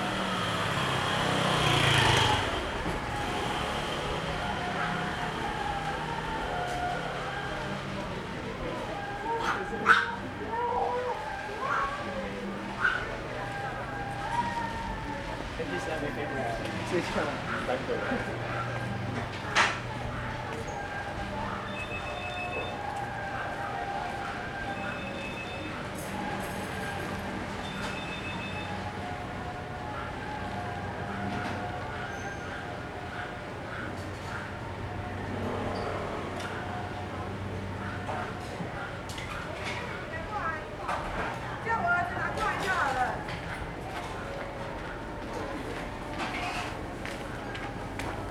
Aly., Ln., Tonghua St. - Walking in a small alley
Walking in a small alley, There are nearby temple festivals
Sony Hi-MD MZ-RH1 + Sony ECM-MS907